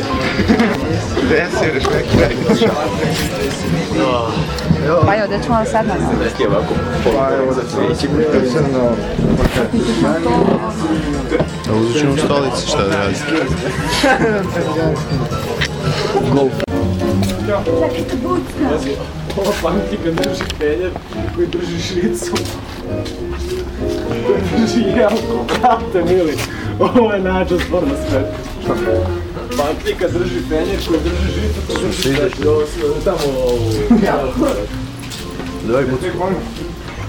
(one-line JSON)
{"title": "[IIIV+tdr] - Jazz klub Riff, Čubura", "date": "2011-11-06 21:16:00", "latitude": "44.80", "longitude": "20.47", "altitude": "144", "timezone": "Europe/Belgrade"}